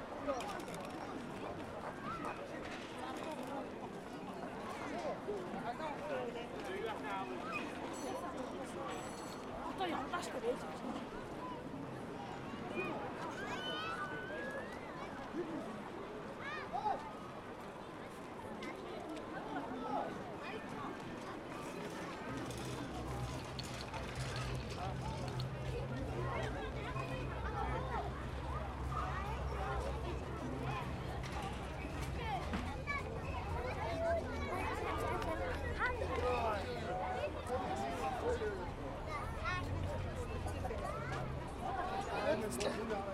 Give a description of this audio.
a rollercoster for one person at a time, the cart were pulled to a high point and took than his way along the rails